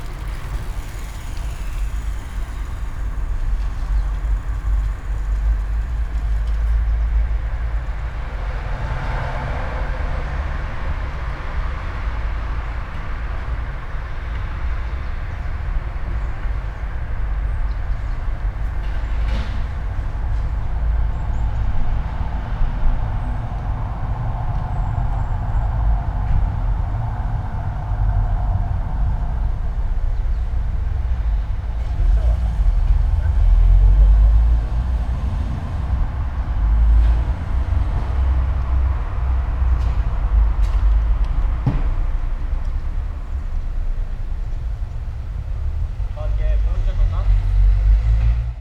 all the mornings of the ... - aug 5 2013 monday 07:26